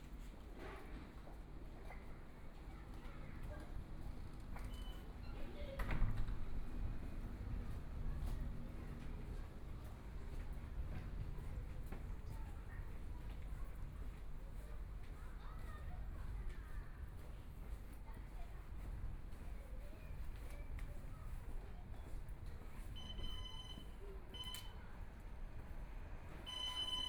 Huangpu District, Shanghai - Old neighborhoods
Walking in the narrow old residential shuttle, Binaural recording, Zoom H6+ Soundman OKM II
Shanghai, China, 27 November 2013, 16:25